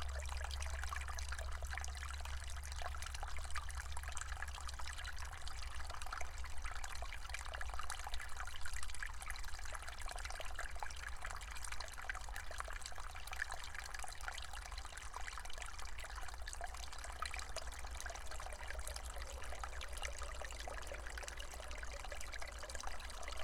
you cannot hide from the traffic lows...
Vyžuonos, Lithuania, November 2018